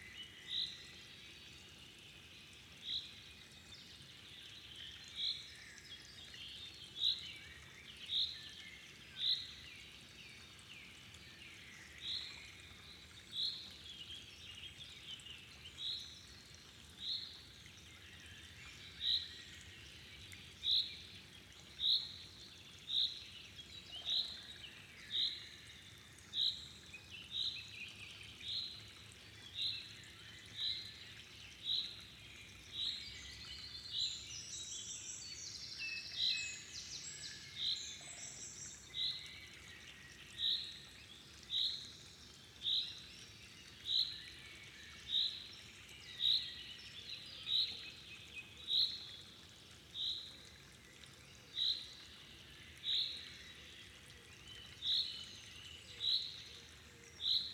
Forest Lake''Höllsee''Nature Park Haßberge Germany - Forest lake on a summer evening
Deep in the Forest you will find this wonderful place. Surrounded by moss-covered trees, swampy wet meadows and numerous smaller watercourses and streams which flow from the higher areas into this forest lake. This nature reserve offers the vital habitat for many plants and creatures here in this area. The ''Höllsee ''As the locals call it, is an important breeding ground for some endangered animal species.
Setup:
EarSight mic's stereo pair from Immersive Soundscapes